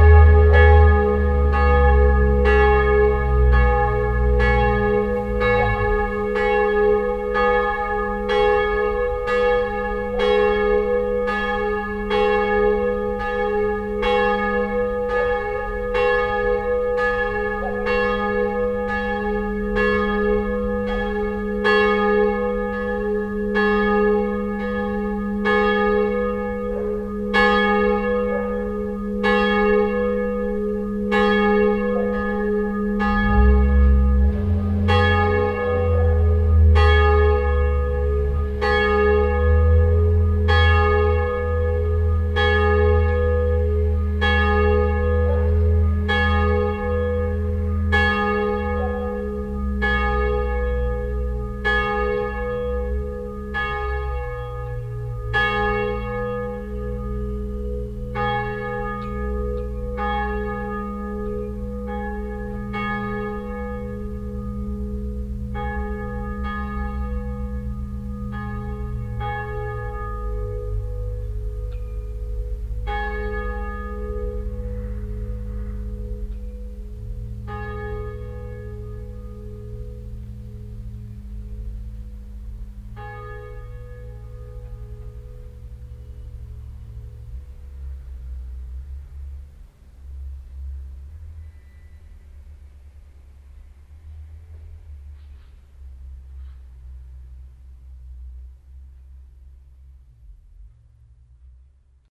hupperdange, church, bells
A second recording of the church bells. This time the full set calling for mass.
Hupperdange, Kirche, Glocken
Eine zweite Aufnahme der Kirchenglocken. Dieses Mal alle Glocken, die zur Messe rufen.
Hupperdange, église, cloches
Un deuxième enregistrement des cloches de l’église. Cette fois le carillon complet qui invite pour la messe
Project - Klangraum Our - topographic field recordings, sound objects and social ambiences
Hupperdange, Luxembourg